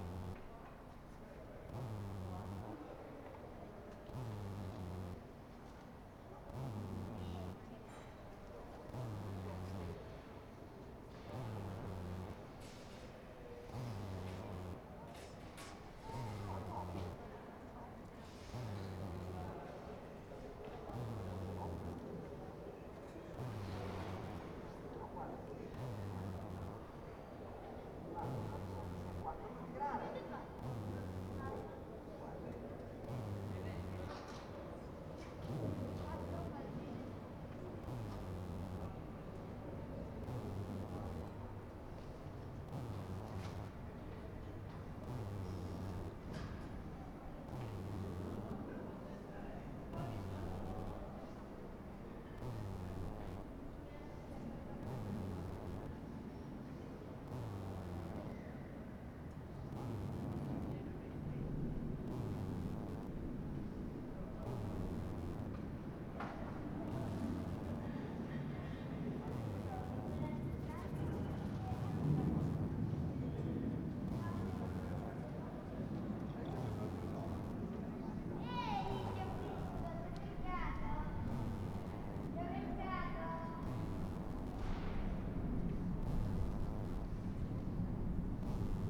Ascolto il tuo cuore, città. I listen to your heart, city. Several chapters **SCROLL DOWN FOR ALL RECORDINGS** - Afternoon with bell and strange buzz in the time of COVID19 Soundscape
"Afternoon with bell and strange buzz in the time of COVID19" Soundscape
Chapter LIII of Ascolto il tuo cuore, città. I listen to your heart, city.
Wednesday April 22nd 2020. Fixed position on an internal terrace at San Salvario district Turin, forty three days after emergency disposition due to the epidemic of COVID19.
Start at 4:13 p.m. end at 5:09 p.m. duration of recording 55’44”.